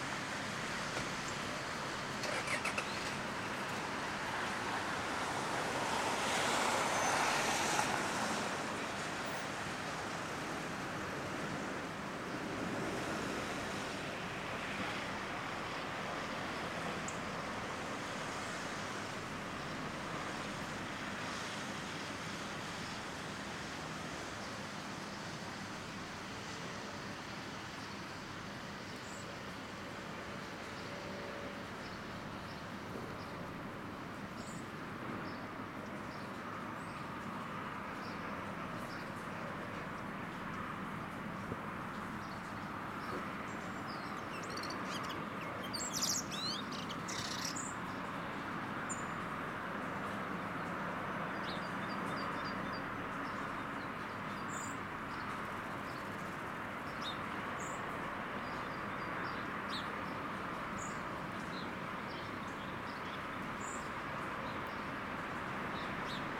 Mount Scopus, Jerusalem
Highway, birds, breeze.